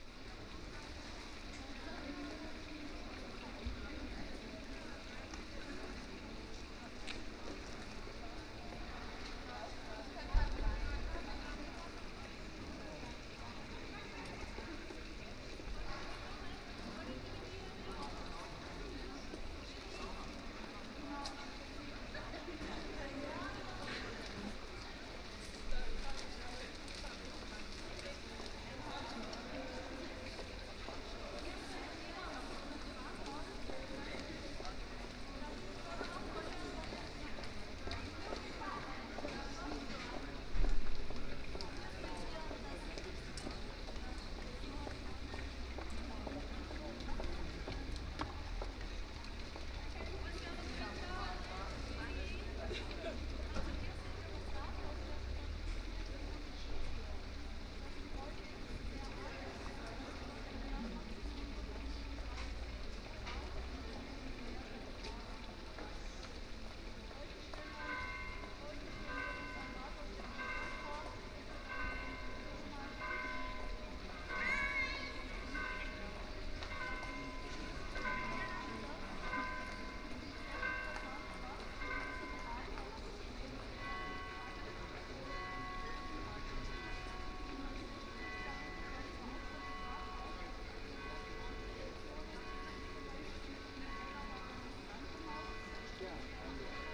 Marktplatz in Tübingen (ohne Wochenmarkt): Stimmen von Einheimischen und Touristen, Brunnen, Rathaus-Glockenspiel, Glocken verschiedener Kirchen in der Nähe.
Marketplace in Tübingen (without weekly market): Voices of locals and tourists, fountains, town hall glockenspiel, bells of various churches nearby.
Baden-Württemberg, Deutschland, 17 September, 12:00